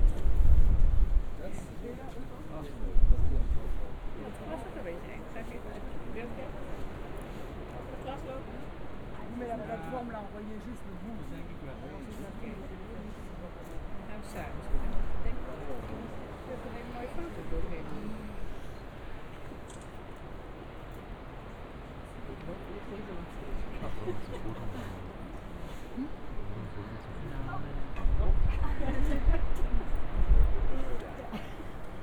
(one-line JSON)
{"title": "Câmara De Lobos - observation deck", "date": "2015-05-05 13:30:00", "description": "(binaural) tourists admiring the view and taking pictures on the observations deck. sounds of the crashing ways down the cliff many meters below.", "latitude": "32.66", "longitude": "-17.00", "altitude": "527", "timezone": "Atlantic/Madeira"}